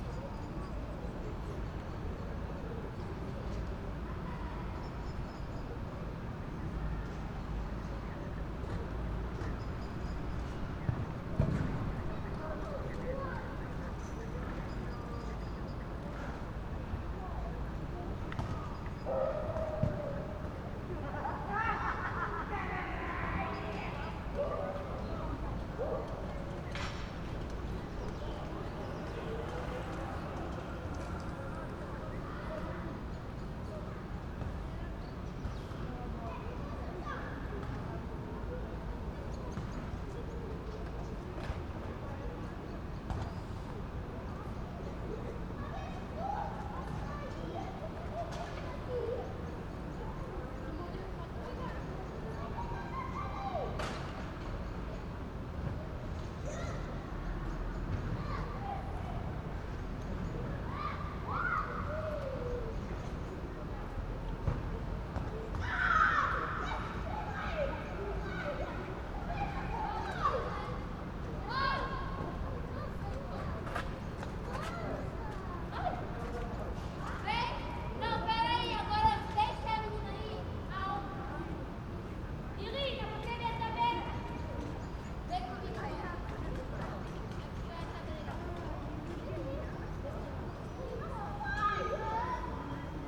Pl. de la Résistance, Esch-sur-Alzette, Luxemburg - evening ambience
spring evening ambience at Place de la Resistance
(Sony PCM D50)
11 May 2022, 21:25